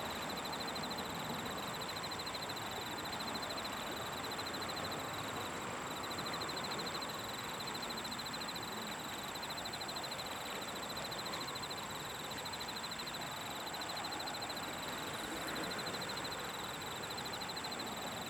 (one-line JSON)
{"title": "대한민국 서울특별시 서초구 양재2동 126 - Yangjaecheon, Autumn, Crickets", "date": "2019-10-27 22:14:00", "description": "Yangjaecheon, Autumn, Crickets\n양재천, 야간, 풀벌레", "latitude": "37.47", "longitude": "127.03", "altitude": "25", "timezone": "Asia/Seoul"}